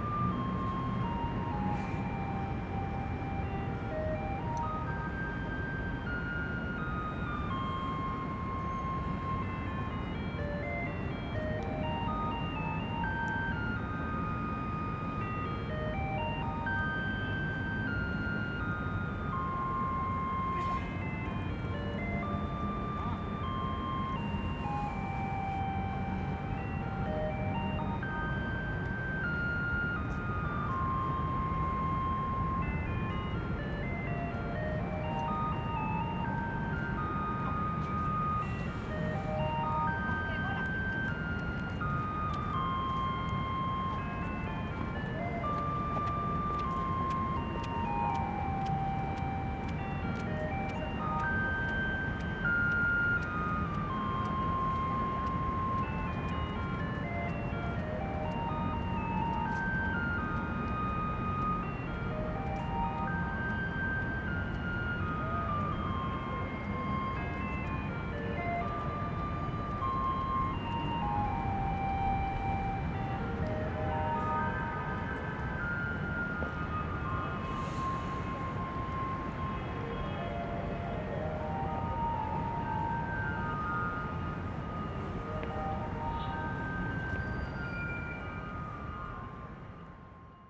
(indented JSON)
{
  "title": "四號公園, Zhonghe Dist., New Taipei City - garbage truck on arrival",
  "date": "2012-02-16 19:23:00",
  "description": "garbage truck on arrival, in the Park, Sony ECM-MS907+Sony Hi-MD MZ-RH1",
  "latitude": "25.00",
  "longitude": "121.51",
  "altitude": "12",
  "timezone": "Asia/Taipei"
}